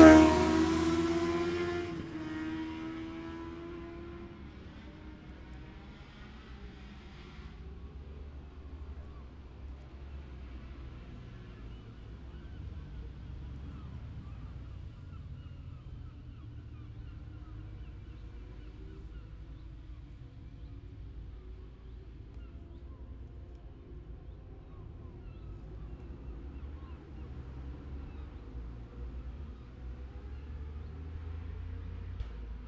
Scarborough, UK - motorcycle road racing 2017 ... newcomers ...
New comers warmup ... Bob Smith Spring Cup ... Olivers Mount ... Scarborough ... 125 ... 250 ... 400 ... 600 ... 1000cc bikes and sidecars ... plenty of background sounds before the bikes arrive ... open lavalier mics clipped to sandwich box ... voices ... bird calls ...